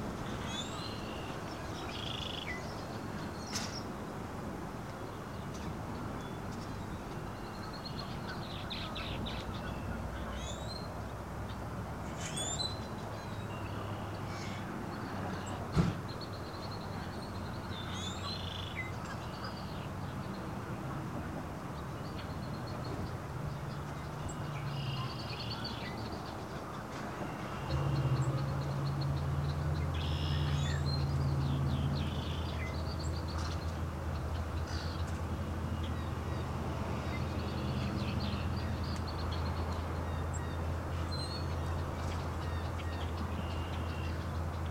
Mouth of the White River, W. Hanson St. near Thompson St., Whitehall, MI, USA - Saturday Serenade
A large variety of waterfowl and songbirds call to each other. A family passes by, including two children with scooters, headed to one of the many nearby parks. To the west, across White Lake, Montague's fire siren sounds to mark 12 noon. Stereo and shotgun mics (Audio-Technica, AT-822 & DAK UEM-83R), recorded via Sony MDs (MZ-NF810 & MZ-R700, pre-amps) and Tascam DR-60DmkII.
30 April 2016